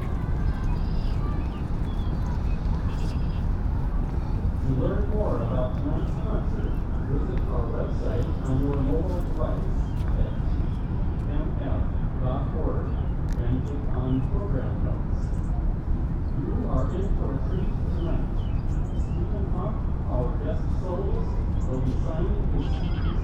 Soundwalk from Lurie Garden to Randolph Street. Includes sounds of birds and pedestrians in the garden, street traffic, and Grant Park Orchestra concert at Pritzker Pavilion.